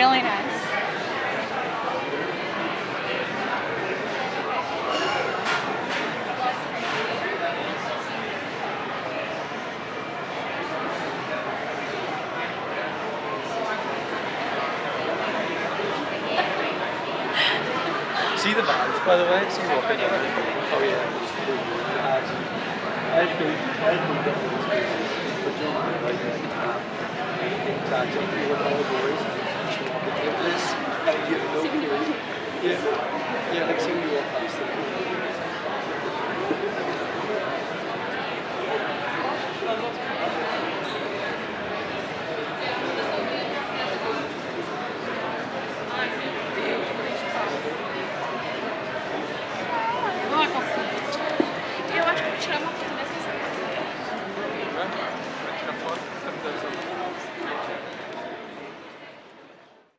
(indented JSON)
{
  "title": "Hill St, Belfast, UK - Commercial Court-Exit Strategies Summer 2021",
  "date": "2021-07-04 18:23:00",
  "description": "Recording of the bars being reopened in the famous bar district in town. People are sitting and chatting away on outdoor sitting arrangements. There are tourists and locals walking around, some taking photos, others discussing the appeal of the bars. There is some background glassware being heard and muffled bar chats.",
  "latitude": "54.60",
  "longitude": "-5.93",
  "altitude": "6",
  "timezone": "Europe/London"
}